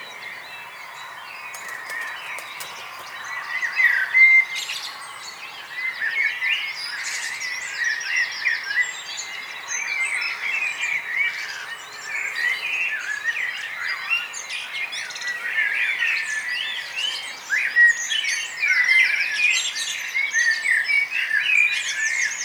tondatei.de: nonnenhorn, morgenstimmung, vögel